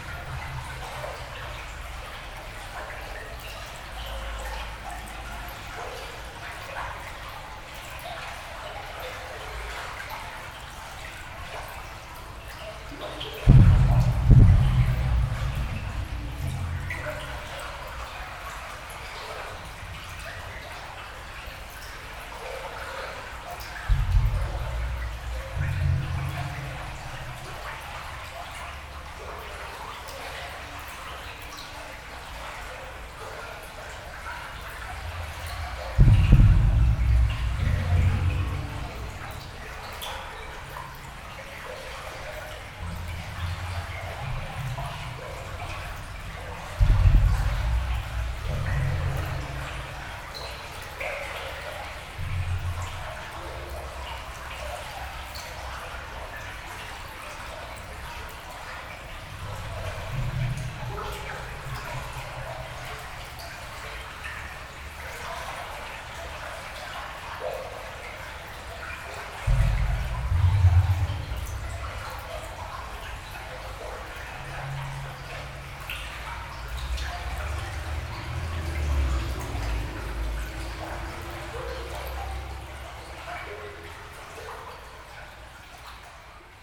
Valenciennes, France - Underground river
The Rhonelle underground river, below the Valenciennes city. Distant sounds of the connected sewers, rejecting dirty water into the river.
24 December, 11:30